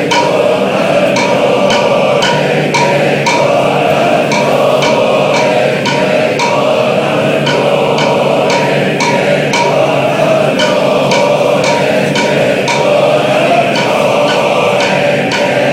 Tashiro, Aoi Ward, Shizuoka, Shizuoka Prefecture, Japan - Shinto chants from Temple
Chanting in a temple on Mt.Shichimenzen.
Shimoina District, Oshika, Okawara 県道253号線, 23 June